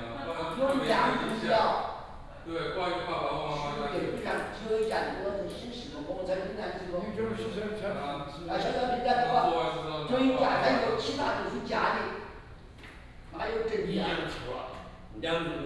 大鵬新城, North Dist., Hsinchu City - In the community hall
In the community hall, Many elderly people chatting, Young from all over China, Various languages and accents, Binaural recordings, Sony PCM D100+ Soundman OKM II
19 October, 14:45